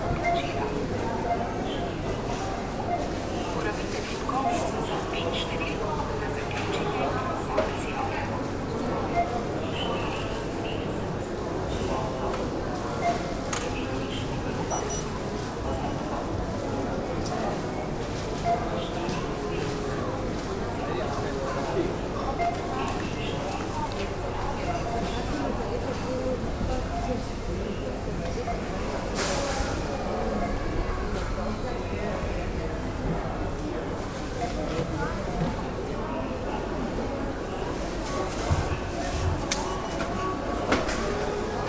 Nova Gorica, Slovenija, Kulandija - Avtomati